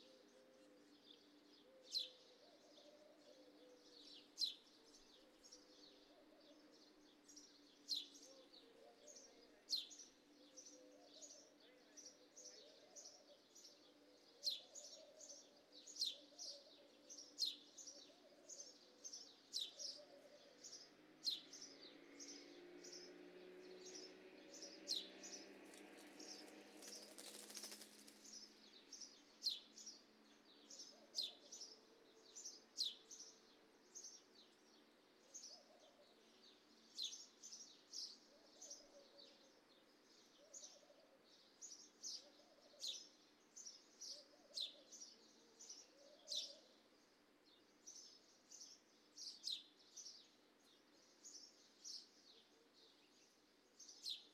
Shaikh Hamad Causeway, Manama, Bahreïn - Novotel Al Dana Resort - Barhain
Novotel Al Dana Resort - Barhain
Ambiance du matin du balcon de ma chambre d'hôtel